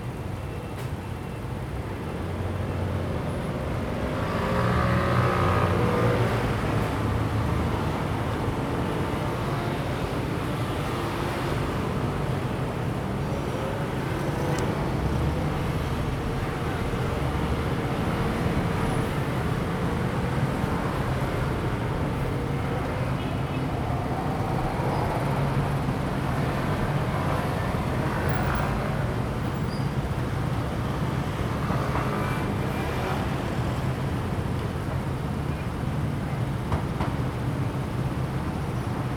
In the corner of the road, Traffic Sound
Zoom H2n MS+XY